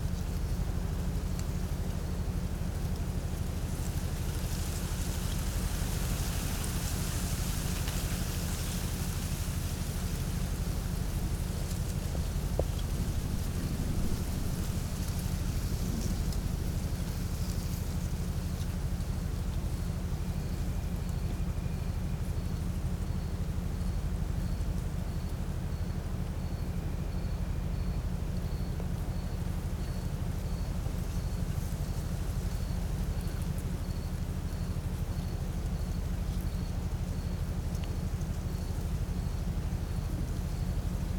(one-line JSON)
{"title": "stromboli, ginostra, solar power station - autunm evening", "date": "2009-11-04 16:39:00", "description": "autunm evening, ginostra, stromboli. hum of a solar power station, wind.", "latitude": "38.79", "longitude": "15.19", "altitude": "137", "timezone": "Europe/Rome"}